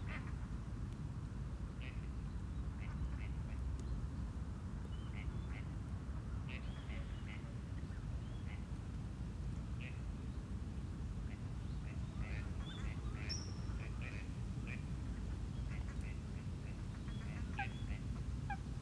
Autumn water birds, Lotosweg, Berlin, Germany - Autumn water birds just before rain
Most of the sound are by a family of Gadwall ducks, including the high squeak. It is a moorhen pattering across the lake surface and later splashes are a great crested grebe diving underwater. A heron looks on silently. The heavy bass in this recording comes from an industrial area some distance away.